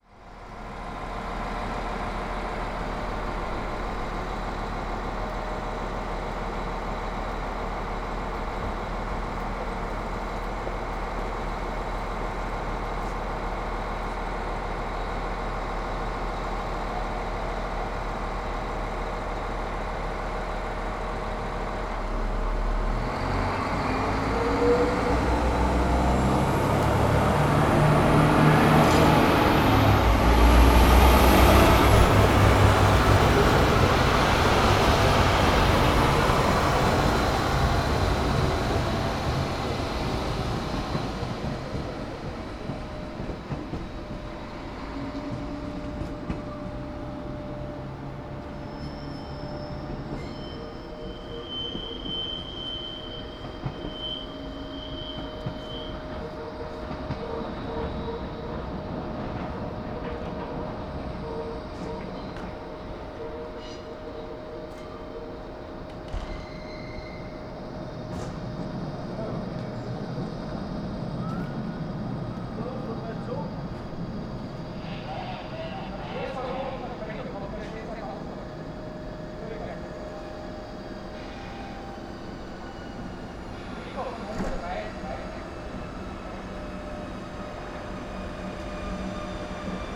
Spielfeld, Strass, Steiermark
austrian slovenian border, 10min stop, staff changes, holding mic out of the window.